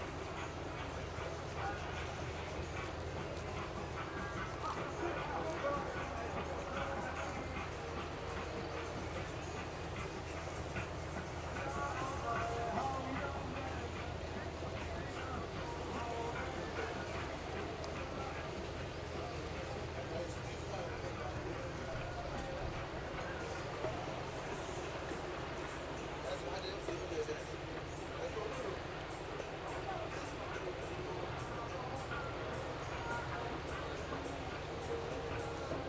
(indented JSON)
{
  "title": "Ha-Hagana St, Acre, Israel - By the sea Acre",
  "date": "2018-05-03 10:51:00",
  "description": "Sea, small waves, music, cafe, drone, murmur",
  "latitude": "32.92",
  "longitude": "35.07",
  "altitude": "5",
  "timezone": "Asia/Jerusalem"
}